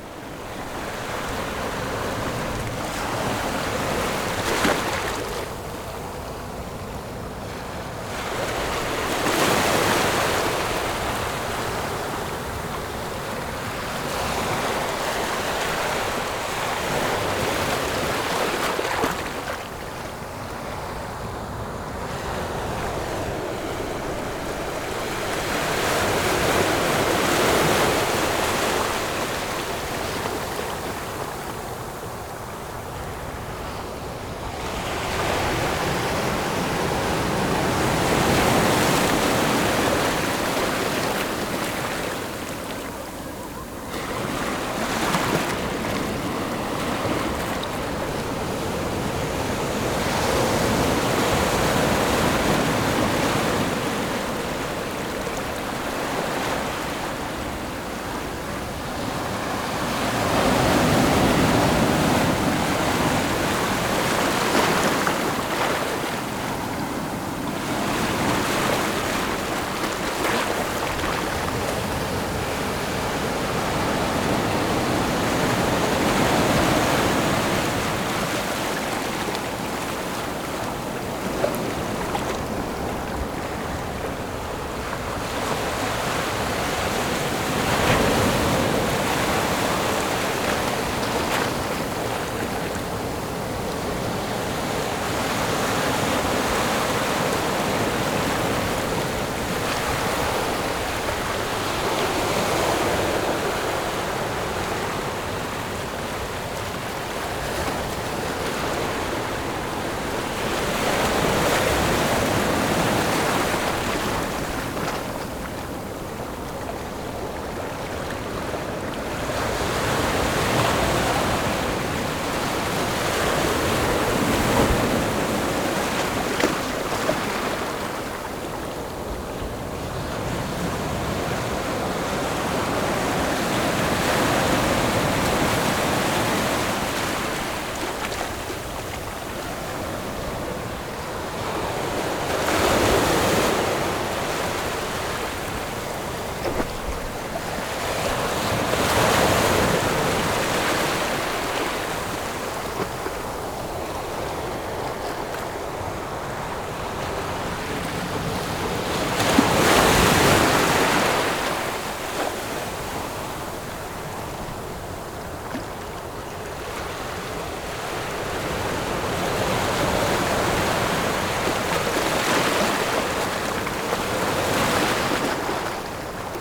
{"title": "永鎮海濱公園, Jhuangwei Township - the Concrete block", "date": "2014-07-26 15:30:00", "description": "Standing next to the Concrete block, In the beach, Sound of the waves\nZoom H6 MS+ Rode NT4", "latitude": "24.78", "longitude": "121.82", "timezone": "Asia/Taipei"}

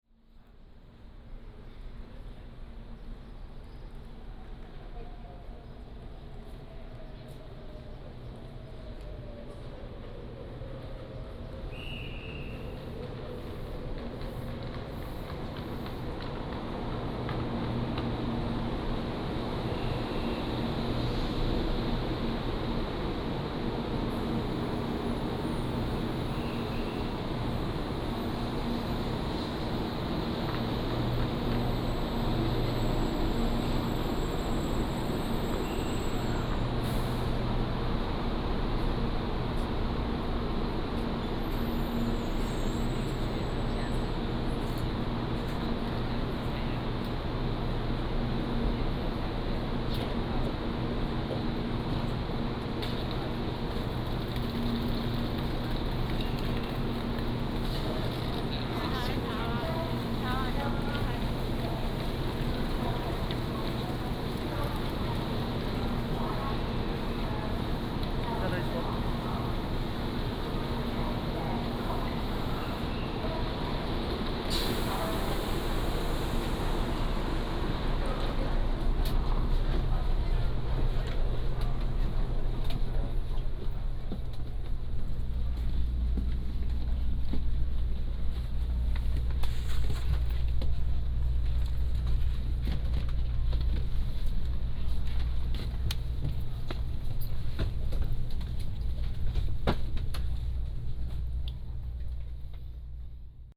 {"title": "THSR Yunlin Station, Taiwan - At the station platform", "date": "2017-01-31 11:36:00", "description": "At the station platform, Train stop", "latitude": "23.74", "longitude": "120.42", "altitude": "23", "timezone": "GMT+1"}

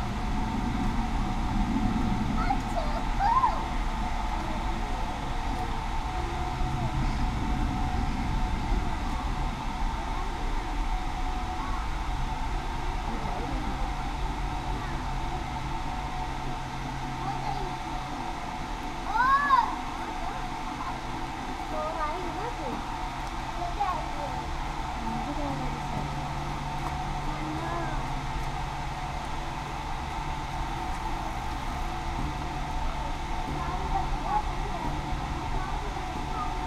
{"title": "Horseshoe Bridge, Thames Path, Reading, UK - Tibetan Railings, Trains and Gas", "date": "2019-06-09 16:28:00", "description": "This is the second recording session I've had here and this time realised that the railings surrounding the gas pipes had a lovely sonic quality and so 'played them with my knuckle, as life carried on around.... Sony M10 with built in mics.", "latitude": "51.46", "longitude": "-0.95", "altitude": "39", "timezone": "Europe/London"}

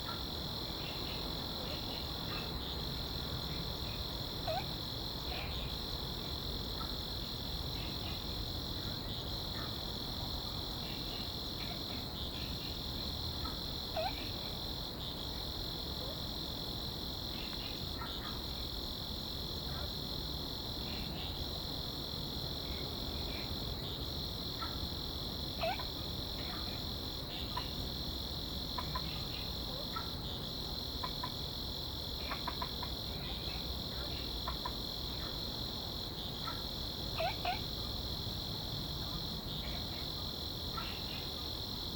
{"title": "福州山公園, Da'an District - Frog and insects sound", "date": "2015-07-05 20:04:00", "description": "In the park, Sound of insects, Frog sound\nZoom H2n MS+XY", "latitude": "25.02", "longitude": "121.55", "altitude": "22", "timezone": "Asia/Taipei"}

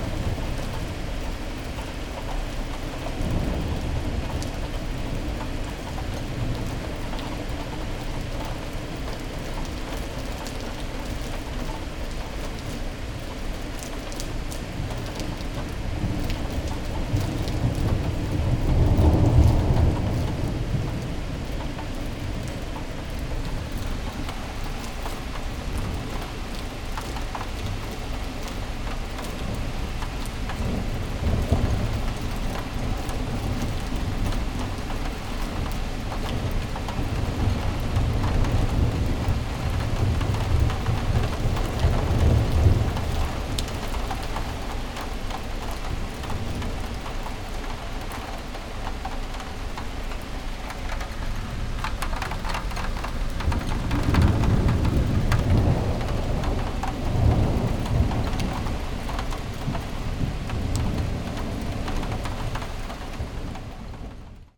{"title": "from/behind window, Mladinska, Maribor, Slovenia - rain", "date": "2012-10-07 17:51:00", "description": "autumn storm, rain, thunder, drops on cars roofs", "latitude": "46.56", "longitude": "15.65", "altitude": "285", "timezone": "Europe/Ljubljana"}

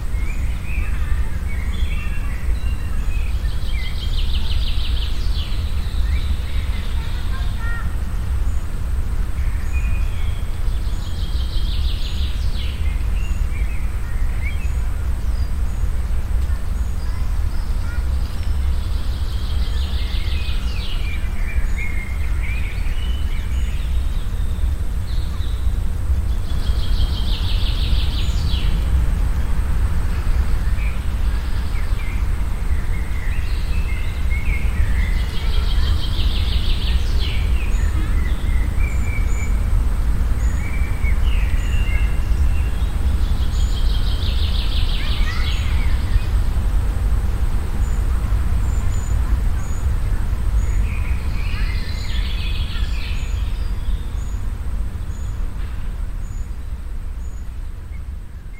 {"title": "cologne, stadtgarten, soundmap, wiese hinterer park", "date": "2008-04-22 13:48:00", "description": "aufnahme september 07 mittags\nproject: klang raum garten/ sound in public spaces - in & outdoor nearfield recordings", "latitude": "50.94", "longitude": "6.94", "altitude": "53", "timezone": "Europe/Berlin"}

